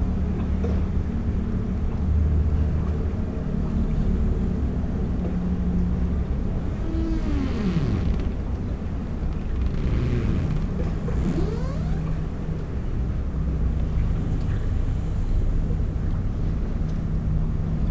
standing at the abandoned ship
25 April 2019, 15:45